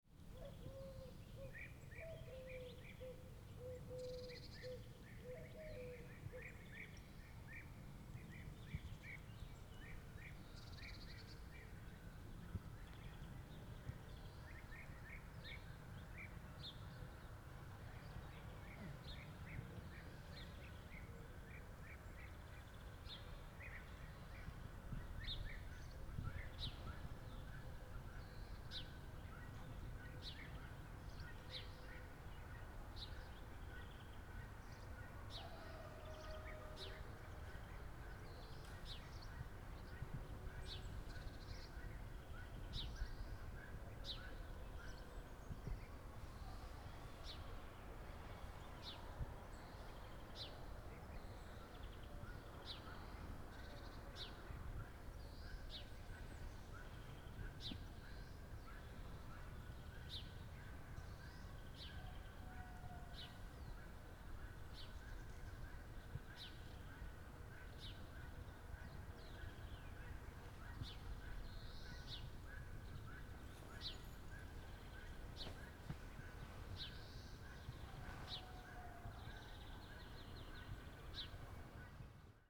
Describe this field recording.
This was recorded in peak summer season at a packed camping site. But it was also recorded during the early hours of the day, when most campers were still asleep. I used the internal XY mics on the Zoom H2N and a wind sock for this one.